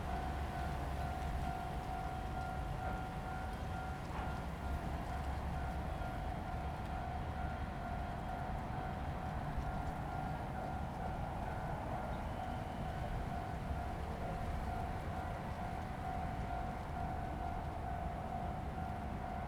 Traffic sound, The train runs through
Zoom H2n MS+XY +Spatial audio